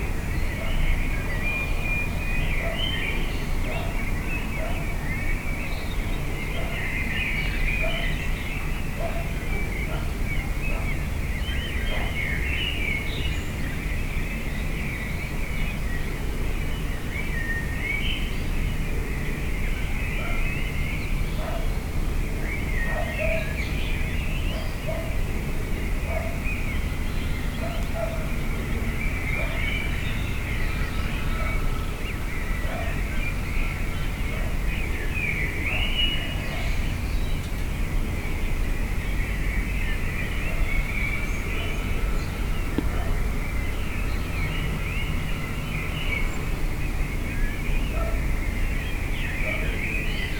(binaural) morning sounds spreading over the city of Funchal. fantastic, liquid sounding bed of bird chirps.